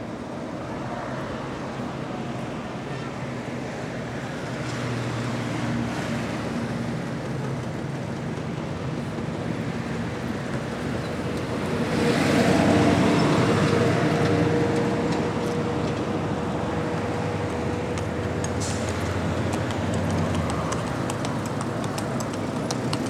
Santiago de Cuba, calle Alameda, traffic
6 December 2003, ~11:00